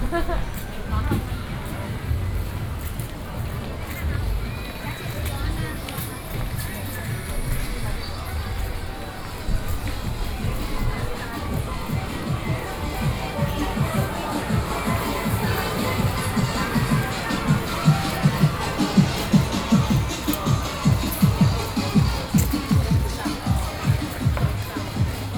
{
  "title": "Xīnzhuāng Rd, New Taipei City - SoundWalk",
  "date": "2012-11-01 20:30:00",
  "latitude": "25.03",
  "longitude": "121.45",
  "altitude": "15",
  "timezone": "Asia/Taipei"
}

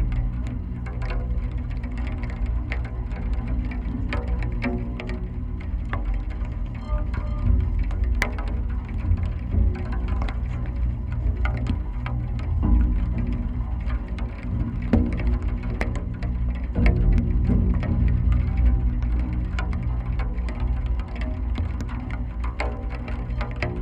{
  "title": "Parallel sonic worlds: Millennium Bridge deep drone, Thames Embankment, London, UK - Millenium Bridge wires resonating in rain",
  "date": "2022-05-20 13:00:00",
  "description": "Drops often hit on, or very close to, the mics. People are still walking past but the wet dampens their footsteps. At one point a large group of school kids come by, some squeaking their trainers on the wet metal surface. There is a suspicion of some of their voices too.",
  "latitude": "51.51",
  "longitude": "-0.10",
  "altitude": "3",
  "timezone": "Europe/London"
}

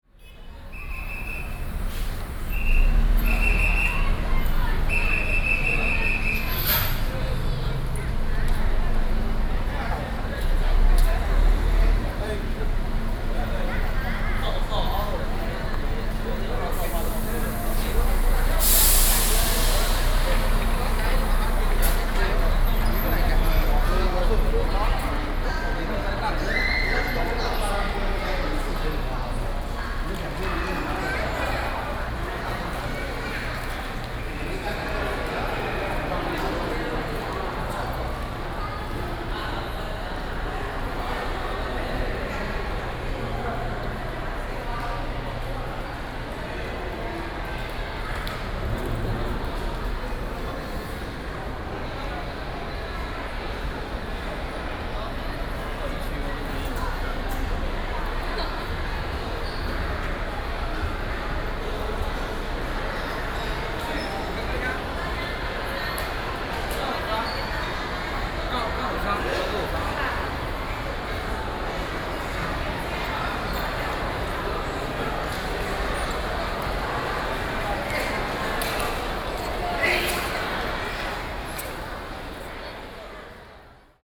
{
  "title": "Port of Keelung - Port Authority hall",
  "date": "2012-06-24 11:22:00",
  "description": "Port Authority hall, Train message broadcasting, Binaural recordings",
  "latitude": "25.13",
  "longitude": "121.74",
  "altitude": "10",
  "timezone": "Asia/Taipei"
}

